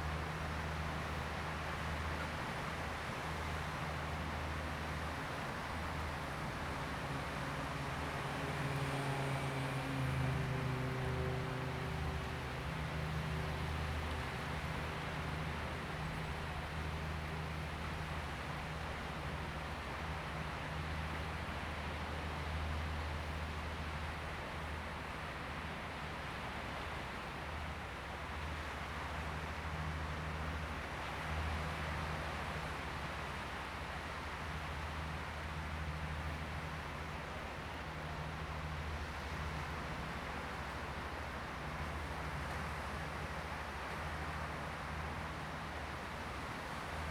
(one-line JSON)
{"title": "Shihlang Diving Area, Lüdao Township - Diving Area", "date": "2014-10-31 09:40:00", "description": "On the coast, Sound of the waves, A boat on the sea\nZoom H2n MS+XY", "latitude": "22.65", "longitude": "121.47", "altitude": "8", "timezone": "Asia/Taipei"}